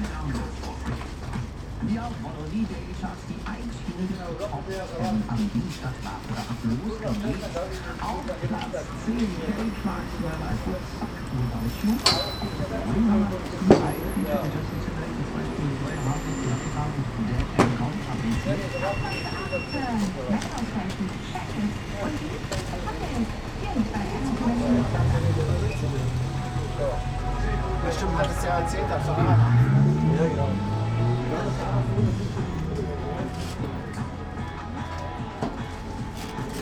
berlin, bürknerstraße: fassadenarbeiten - the city, the country & me: facade works
arbeiter auf gerüst hören radio
workers on scaffold listen to the radio
the city, the country & me: april 7, 2009
Berlin, Germany, 14 April